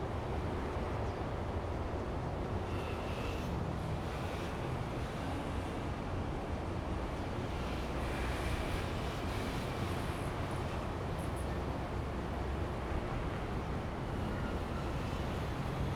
新農街二段, Yangmei Dist., Taoyuan City - the train runs through
Traffic sound, The train runs through, Next to the tracks, Zoom H2n MS+XY
Taoyuan City, Taiwan, 11 August